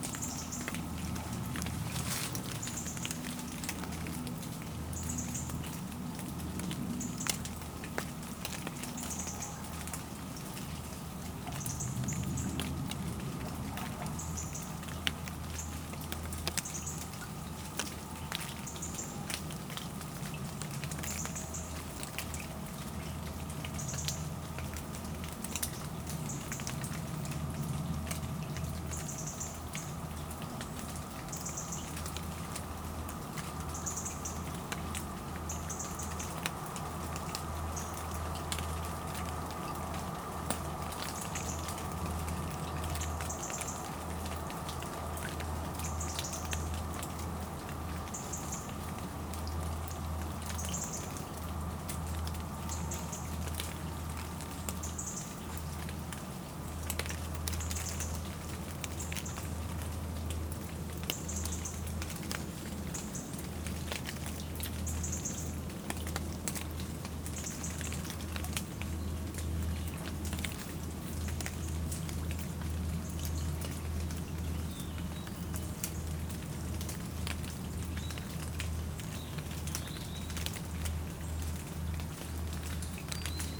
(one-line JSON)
{"title": "Porte-Joie, France - Soft rain", "date": "2016-09-20 17:00:00", "description": "A soft rain is falling onto the trees, on the quiet Seine river bank.", "latitude": "49.24", "longitude": "1.25", "altitude": "10", "timezone": "Europe/Paris"}